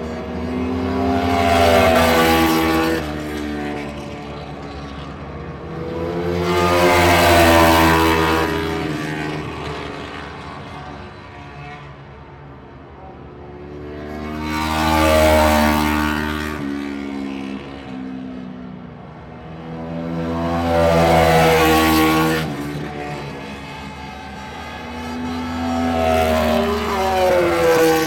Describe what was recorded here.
British Motorcycle Grand Prix 2005 ... MotoGP warm up ... single point stereo mic to mini-disk ...